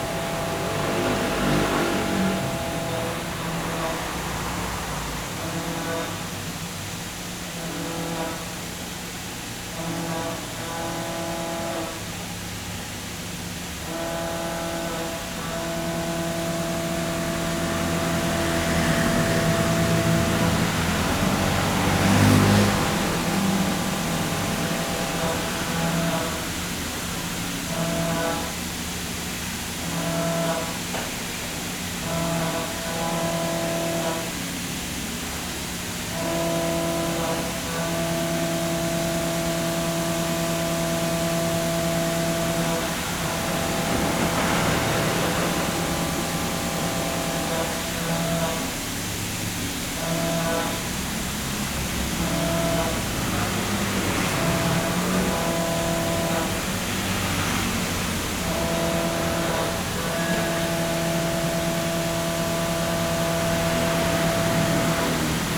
Fuying Rd., Xinzhuang Dist., New Taipei City - the voice of the factory
Sound from Factory, Traffic Sound
Zoom H4n +Rode NT4